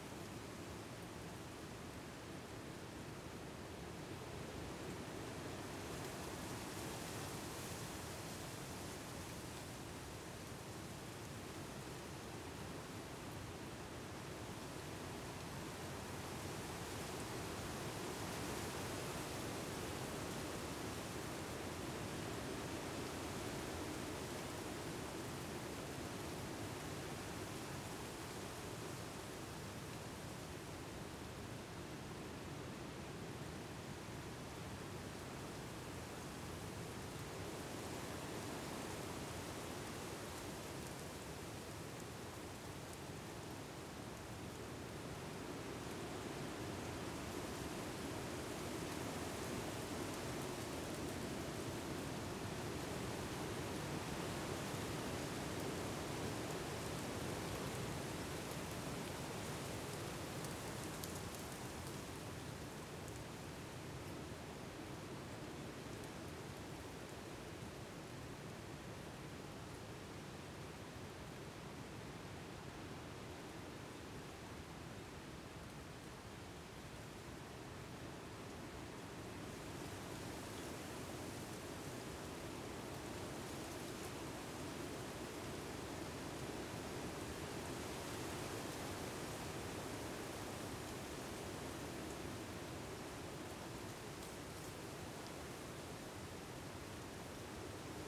Troon, Camborne, Cornwall, UK - Wind Through The Trees
Been walking through these woods a lot recently listening to the wind blowing through the trees, so I thought I would head down there on a dryish day and record an atmosphere. I used a pair of DPA4060 microphones, Sound Devices Mixpre-D and a Tascam DR-100 to capture the recording. I've done a little bit of post-processing, only slight EQ adjustments to remove some low frequency rumble that was in the recording.
23 December 2015